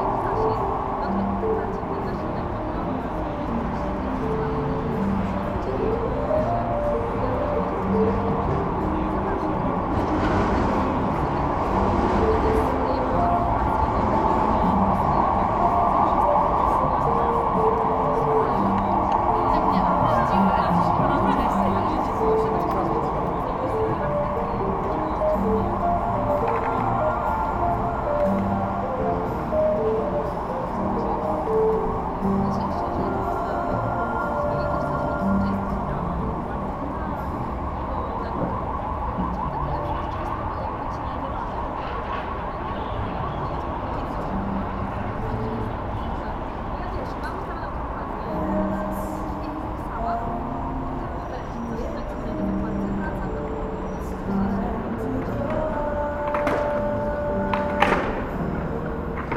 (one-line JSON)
{"title": "Poznan, Zwierzyniecka - court yard behind Baltyk building", "date": "2019-05-14 16:10:00", "description": "relatively quiet space among new Baltik building, a hotel and a Concordia Design building. There are a few restaurants there, coffee place, a few benches to sit down. Skaters toss their skateboards, music from restaurants, a girl swinging by at her scooter, plastic ziplock bag crackling in the wind near the recorder, traffic noise from a circle crossing nearby. (roland r-07)", "latitude": "52.41", "longitude": "16.91", "altitude": "82", "timezone": "Europe/Warsaw"}